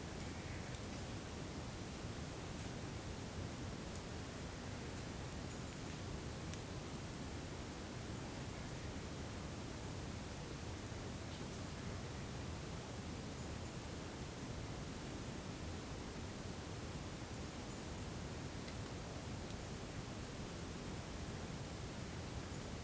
Los Silos, Santa Cruz de Tenerife, España - MIDNIGHT IN TIERRA DEL TRIGO
Opening the WLD2014 in a wonderful place Tierra del Trigo, north of the island of Tenerife, In the pines and in the village.